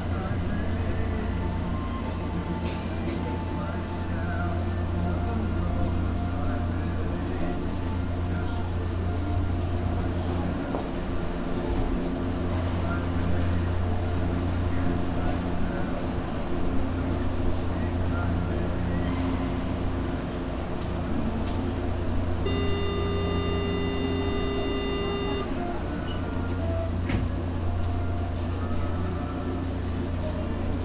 R. Vergueiro - Jardim Vila Mariana, São Paulo - SP, 04101-300, Brasil - São Paulos Subway
Inside the São Paulo subway train, between the Trianon-masp and Cháraca klabin stations. Recorded with TASCAM DR-40 with internal microphones